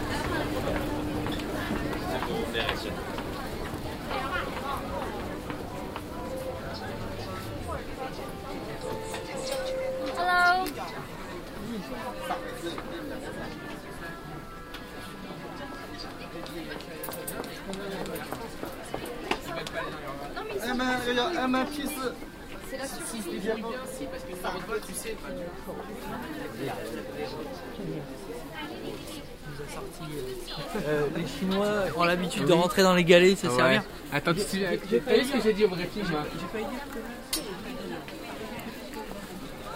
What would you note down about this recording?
beijing cityscape - one of several indoor clothing market - place maybe not located correctly -please inform me if so, project: social ambiences/ listen to the people - in & outdoor nearfield recordings